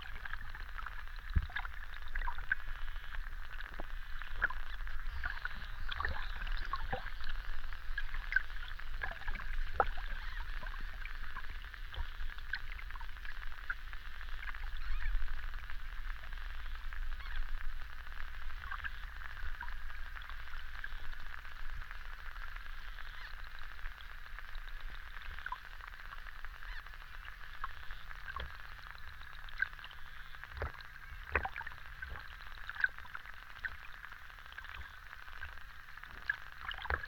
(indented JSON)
{
  "title": "Jūrmala, Latvia, Lielupe underwater",
  "date": "2020-07-23 10:15:00",
  "description": "hydrophome in river Lielupe",
  "latitude": "56.97",
  "longitude": "23.80",
  "timezone": "Europe/Riga"
}